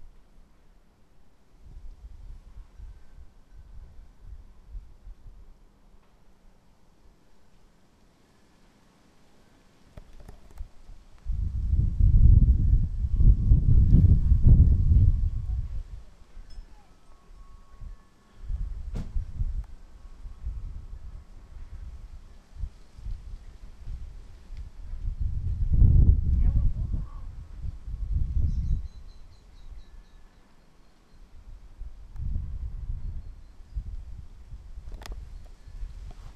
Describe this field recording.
Waiting in front of our hosts' house to get started on the day's tour, overlooking the bay.